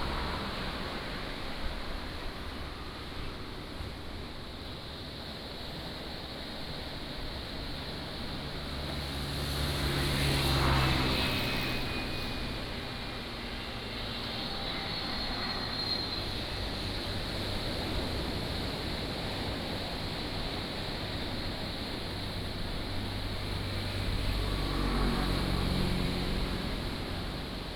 永福齒草橋, Changbin Township - waves and rain
sound of the waves, The sound of rain, Traffic Sound